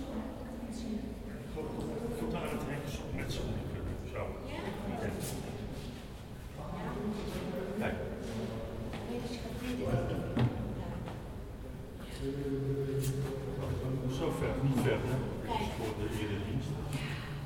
The Turkish Bazaar, Acre, Israel - Tourists in Mosque
Tourists in Mosque